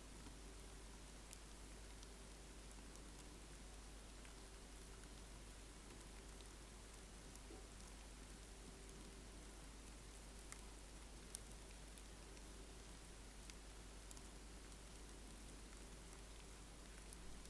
Our living room, Katesgrove, Reading, UK - silkworms in the living room
I have been raising silkworms to better understand the provenance of silk textiles: this is in preparation for a Sonic Trail I am producing for TATE Modern, which will accompany an exhibit by Richard Tuttle, made of silk, viscose and modal.
I have about 100 silkworms which I ordered online a fortnight ago. They love the leaves from our Mulberry tree and are growing well on a diet of them, washed and freshly picked. I have been experimenting with the best ways of recording the sounds of these silkworms; the main sounds are of their tiny claspers (feet) moving on the coarse leaves, and of their tiny jaws chowing down.
You would not believe how many leaves these little comrades can eat! This recording experiment was done at midnight by switching off all the buzzy electronics in the room and lowering my sound professional binaural microphones into the silkworms' container so they hung right beside the worms.
14 August 2014